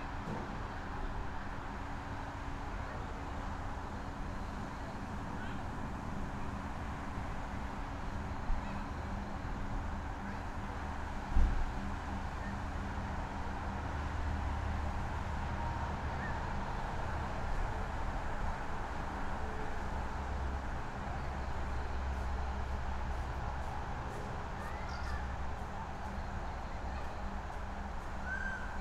small omni microphones through open motel window